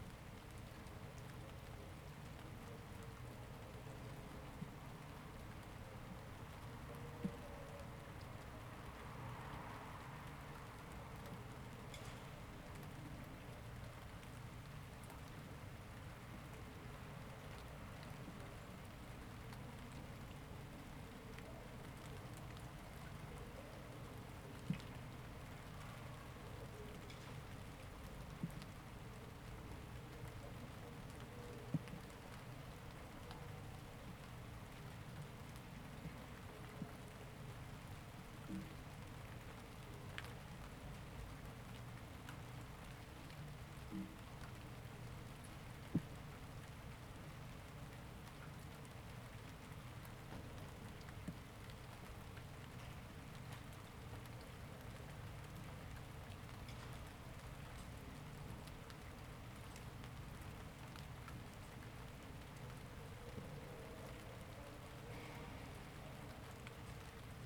Ascolto il tuo cuore, città. I listen to your heart, city. Several chapters **SCROLL DOWN FOR ALL RECORDINGS** - Round midnight with light rain in the time of COVID19 Soundscape
"Round midnight with light rain in the time of COVID19" Soundscape
Chapter CIII of Ascolto il tuo cuore, città, I listen to your heart, city
Monday, June 8th – Tuesday June 9th 2020. Fixed position on an internal terrace at San Salvario district Turin, ninety-one days after (but day thirty-seven of Phase II and day twenty-four of Phase IIB and day eighteen of Phase IIC) of emergency disposition due to the epidemic of COVID19.
Start at 11:42 p.m. end at 00:01 a.m. duration of recording 19'22''.
8 June 2020, 23:42